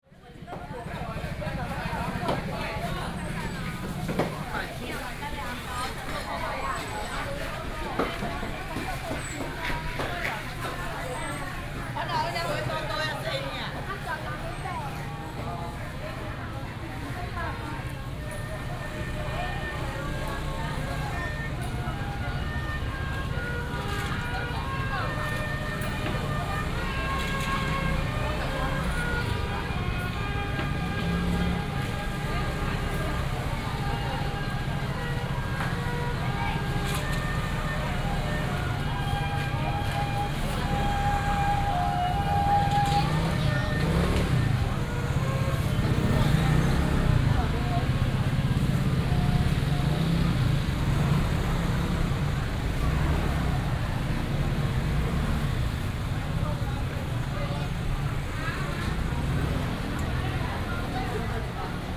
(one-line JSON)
{"title": "彰化三民批發市場, Changhua City - Walking in the wholesale market", "date": "2017-03-18 09:14:00", "description": "Walking in the wholesale market", "latitude": "24.09", "longitude": "120.55", "altitude": "22", "timezone": "Asia/Taipei"}